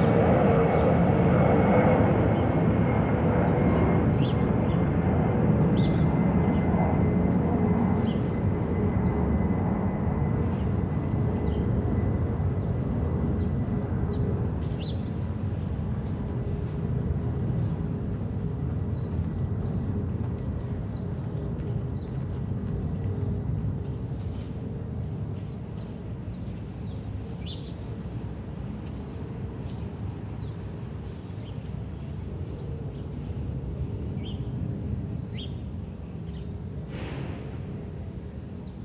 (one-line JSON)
{"title": "Fordoner Straße, Berlin, Deutschland - Fordoner Straße, Berlin - small square, passers-by, airplanes", "date": "2012-10-12 13:30:00", "description": "Fordoner Straße, Berlin - small square, passers-by, airplanes. Residing next to an allotment site and next to the green belt which girds the small stream Panke, this place lies in a forgotten corner of Soldiner Kiez. If there weren't airplanes overflying every three minutes and if it were not so strewn with litter, it could even be called peaceful.\n[I used the Hi-MD-recorder Sony MZ-NH900 with external microphone Beyerdynamic MCE 82]\nFordoner Straße, Berlin - kleiner Platz, Passanten, Flugzeuge. Zwischen einer Kleingartenanlage und dem Grüngürtel entlang der Panke gelegen bildet dieser Platz einen vergessenen Winkel im Soldiner Kiez. Wären da nicht die Flugzeuge, die alle drei Minuten darüber hinwegziehen, und wäre der Platz nicht ganz so vermüllt, könnte man die Atmosphäre fast als friedlich beschreiben.\n[Aufgenommen mit Hi-MD-recorder Sony MZ-NH900 und externem Mikrophon Beyerdynamic MCE 82]", "latitude": "52.56", "longitude": "13.38", "altitude": "47", "timezone": "Europe/Berlin"}